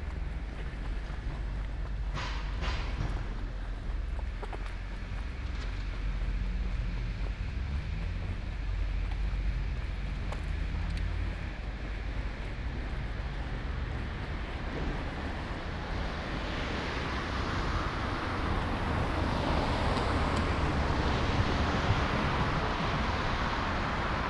Ascolto il tuo cuore, città. I listen to your heart, city. Chapter CLXIV - Sunday transect NW in Torino in the time of COVID19: Soundwalk
"Sunday transect NW in Torino in the time of COVID19": Soundwalk
Chapter CLXIV of Ascolto il tuo cuore, città. I listen to your heart, city
Sunday, March 28st 2021. One way walk to a borderline “far destination”: a transect direction NorthFirst day of summer hour on 2021. One year and eighteen days after emergency disposition due to the epidemic of COVID19.
Start at 2:22 p.m. end at 3:33 p.m. duration of recording 01:11:10.
The entire path is associated with a synchronized GPS track recorded in the (kmz, kml, gpx) files downloadable here:
Piemonte, Italia, 28 March 2021